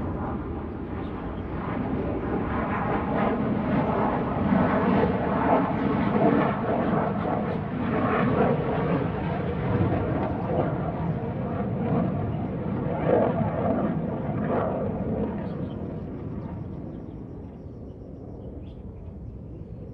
延平路一段546巷7弄, Hsinchu City - sound of birds and Fighters
sound of birds, Fighters take off, Dog sounds, Zoom H2n MS+XY
Hsinchu City, Taiwan, 15 September